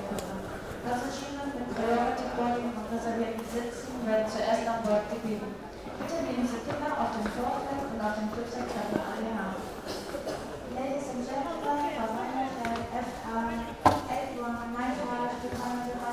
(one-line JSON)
{"title": "Lübeck airport, check-in hall - waiting line", "date": "2013-09-24 09:28:00", "description": "passengers of three different flights waiting in line for their check-in, talking, moving about their luggage, quieting down their kids.", "latitude": "53.81", "longitude": "10.70", "altitude": "13", "timezone": "Europe/Berlin"}